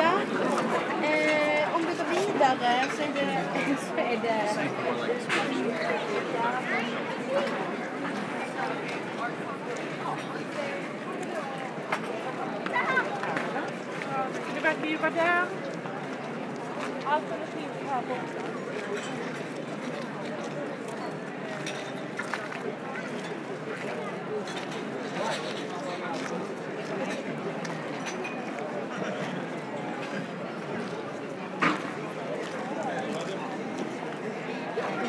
Malmö, Sweden - Malmö restaurants
Making a short walk on one of the main square of Malmö, sound of the restaurants, during a very shiny day off.